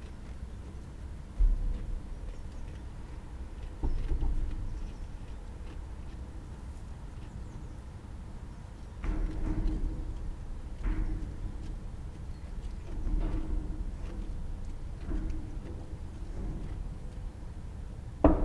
This is the sound of the black Hebridean sheep rams kept at Rayrigg Hall, eating hay out of their trough and clanging their horns on the metal as they do so. Hebridean sheep are small, hardy, and wild. They are one of the breeds closely related to the primitive, pre-domesticated wild sheep. Originally concentrated on St Kilda, (a Western archipelago 40 sea miles from Scotland's most westerly isles) The Black Hebridean sheep became a favourite park animal amongst the gentry of Cumbria, who favoured them for their hardiness and exotic, multi-horned appearance. Some of the rams appear to have six horns, and they are a beautiful, very dark brown/black colour. Their fleece is characterful and hardy, like the sheep. You can't record them whilst physically being present, as they are deeply suspicious of humans that aren't their shepherd, so to make this recording I buried my recorder in their hay.